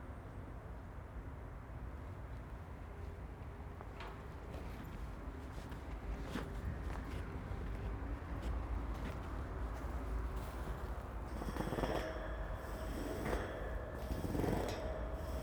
Somewhat unexpected - a short metal bridge over the brutalist concrete car park entrance is quite tuneful when slapped with the palm of my hand. The autobahn roar accompanies.
9 September 2020, 17:00